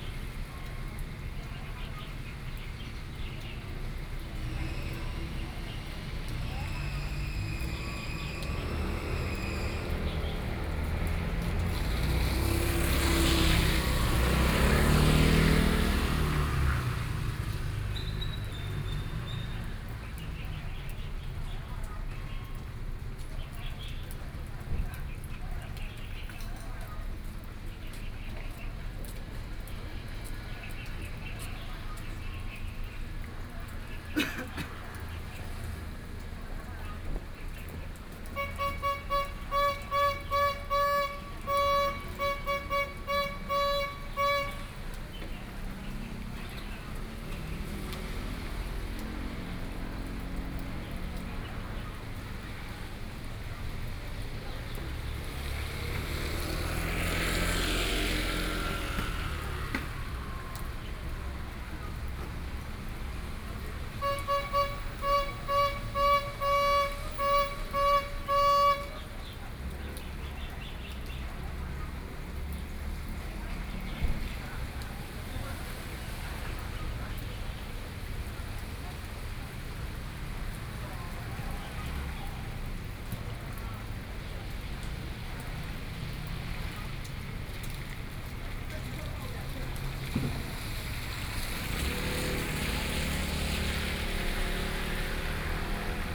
Square in front of the station, Rainy Day, Selling ice cream sounds, The traffic sounds, Binaural recordings, Zoom H4n+ Soundman OKM II